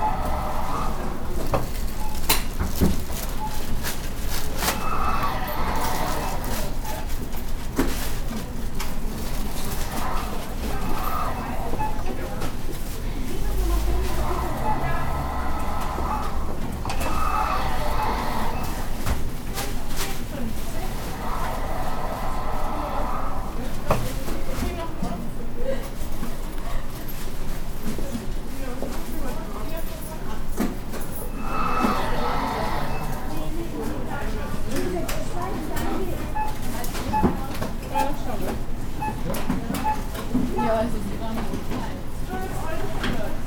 cologne, gürzenich str. drugstore
inside a german drugstore, the beep of the cash scanner, steps and the pneumatic doors
soundmap nrw - social ambiences and topographic field recordings
Deutschland, European Union